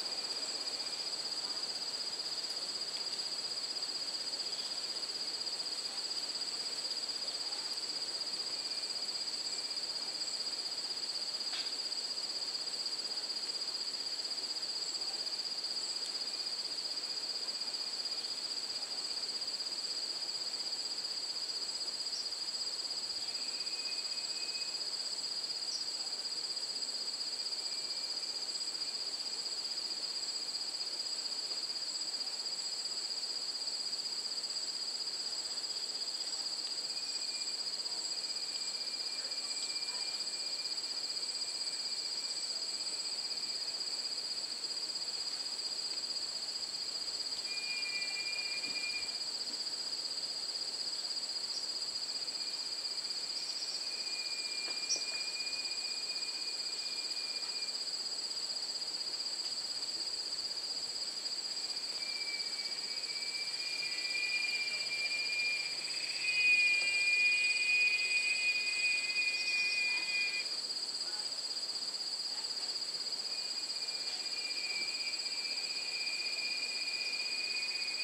Lake Bacalar, Quintana Roo, Mexico - Yucatan birds and bugs by the Lake
Bugs and birds getting excited for the evening's activities by the shore of Lake Bacalar, the "Lake of Seven Shades of Blue" in the Yucatan. Listen for this absurdly loud cicada type bug which sounds like an intermittent electronic alarm. One of the bugs went off right next to the microphone causing an ear detonation, so I lowered that moment by 15 dB...